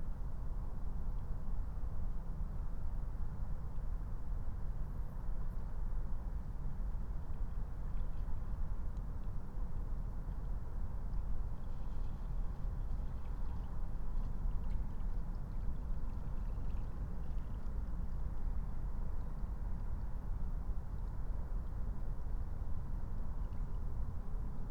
23:09 Berlin, Königsheide, Teich
(remote microphone: AOM 5024HDR/ IQAudio/ RasPi Zero/ 4G modem)
Deutschland, April 2021